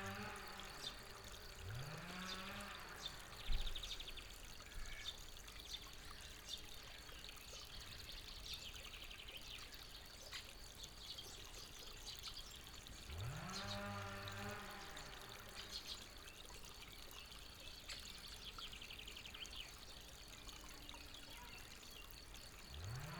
Vinarje, Maribor - chainsaw at work
changed position... now the chainsaw is working, dominating the village's soundscape.